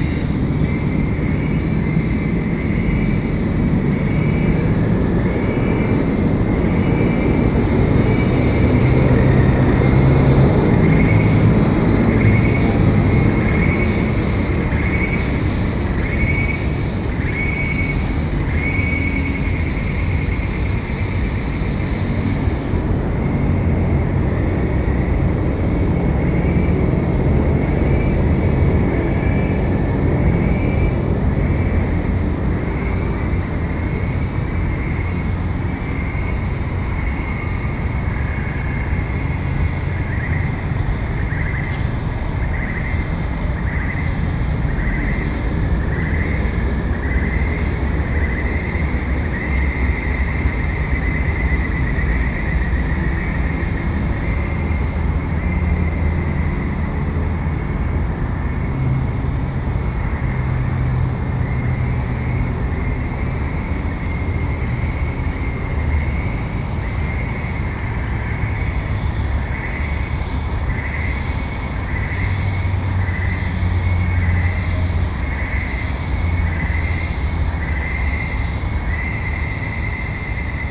Hutan Rekreasi, Melaka, Malaysia - Dusk Chorus at Recreational Forest
Dusk chorus. In the background is the busy road nearby the recreational forest entrance. People are leaving as its approaching dark.